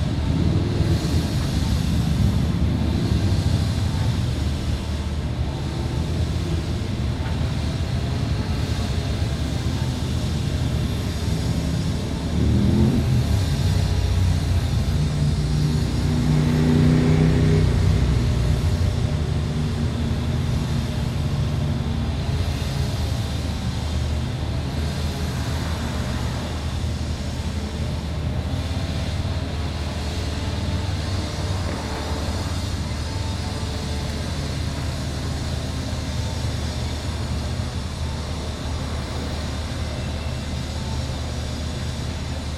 {"title": "neoscenes: night time road work", "date": "2011-07-26 22:23:00", "latitude": "34.54", "longitude": "-112.47", "altitude": "1631", "timezone": "America/Phoenix"}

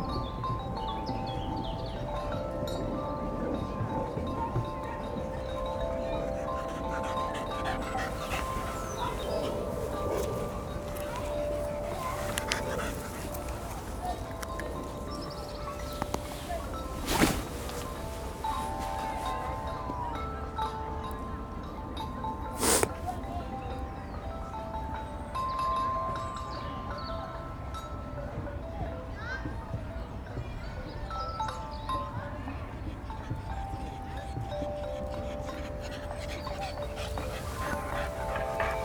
{"title": "Horniman Museum and Gardens - Fetch with a Dog Called Charlie", "date": "2016-03-30 13:25:00", "description": "I went out to the Horniman Museum and Gardens - home to a 'sound garden' full of instruments for children (and many adults) to play on - to make a simple ambient recording. However, I met a little dog who I made the mistake of throwing a ball for just once, after which he wouldn't leave me alone to record and kept bringing the ball back to me rather than his owner, Sue. We had a lovely time.", "latitude": "51.44", "longitude": "-0.06", "altitude": "83", "timezone": "Europe/London"}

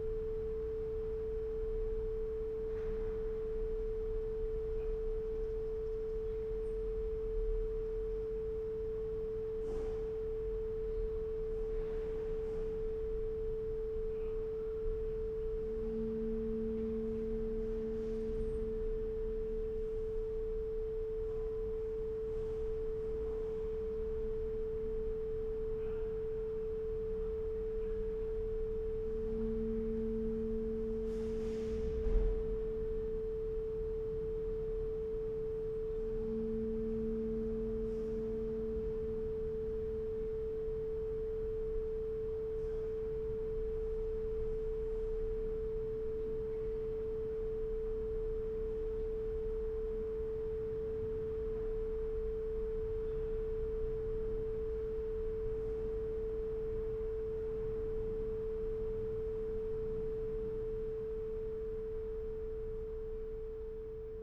{"title": "Gleisdreick, Berlin - intercom, tones and tunes", "date": "2016-05-26 23:30:00", "description": "close to midnight at Gleisdreick, Berlin, at a gate, attracted by the tone of an intercom and other sounds of unclear origin, night ambience.\n(Sony PCM D50, Primo EM172)", "latitude": "52.50", "longitude": "13.37", "altitude": "33", "timezone": "Europe/Berlin"}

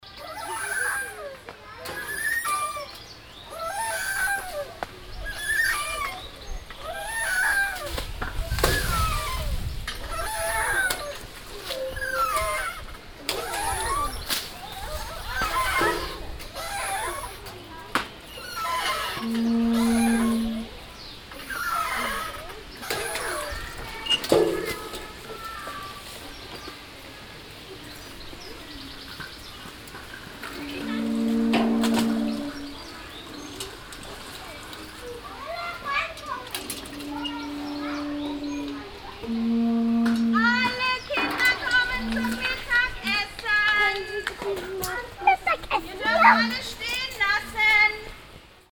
{"title": "wolfsburg, playground", "date": "2011-07-21 22:27:00", "description": "Nearby a public playground. The sound of a moving swing with a strange queeky noise and a water pump organ toy instrument followed by a pedagogue call.\nsoundmap d - social ambiences and topographic field recordings", "latitude": "52.45", "longitude": "10.85", "altitude": "62", "timezone": "Europe/Berlin"}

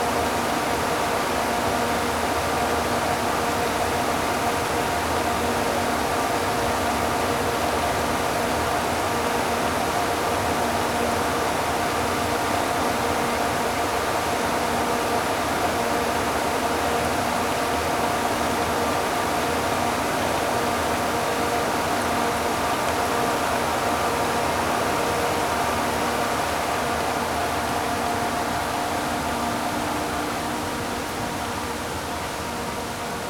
{"title": "Porto, Jardins do Palácio de Cristal do Porto - pond pump", "date": "2013-10-01 13:47:00", "description": "static hum of the pump distributing water for the fountains around the pond then moving a bit to catch the burst of artificial waterfall.", "latitude": "41.15", "longitude": "-8.63", "altitude": "80", "timezone": "Europe/Lisbon"}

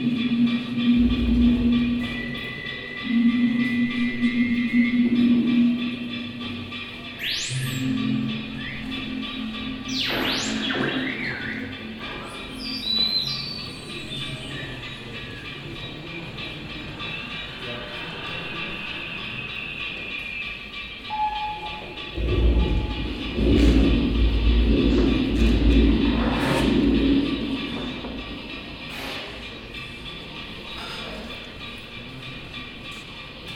{"title": "Düsseldorf, Heinrich Heine Allee, Wilhelm Marx Haus - düsseldorf, heinrich heine allee, wilhelm marx haus", "date": "2011-01-24 14:08:00", "description": "recorded during the interface festival at the staircase of the building - sound art students performing a staircase music for the place\nsoundmap d - social ambiences, art spaces and topographic field recordings", "latitude": "51.22", "longitude": "6.78", "timezone": "Europe/Berlin"}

